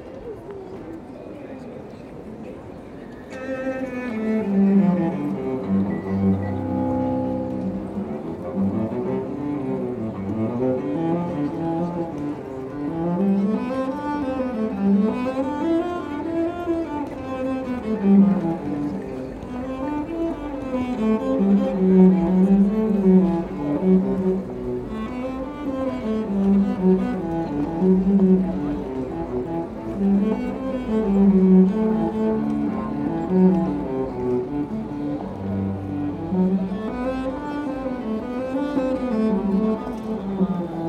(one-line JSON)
{"title": "Gent, België - Street cellist", "date": "2019-02-16 18:40:00", "description": "Sint-Baafsplein. A good cello player. That's a good-lookin' city right there Ghent !", "latitude": "51.05", "longitude": "3.73", "altitude": "11", "timezone": "Europe/Brussels"}